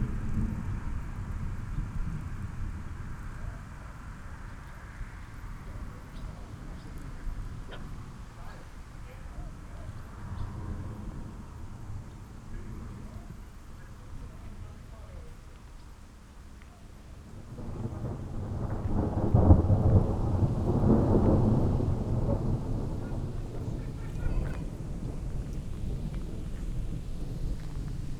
Letschin, Bahnhof - station ambience, thunderstorm
Letschin Bahnhof, main station, thunderstorm arrives, station ambience. This is a small rural station, trains commute between Eberwalde and Frankfurt/Oder every 2 hours.
(Sony PCM D50, DPA4060)
Letschin, Germany, 30 August 2015